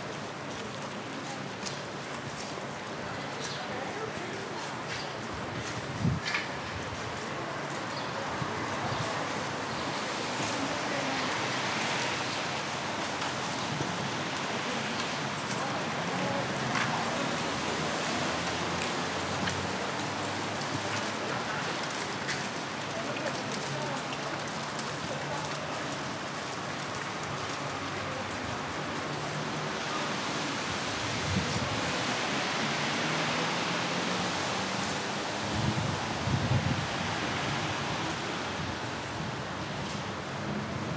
people in the terrasse, walkers in the street, lunch time
Sollefteå, Sweden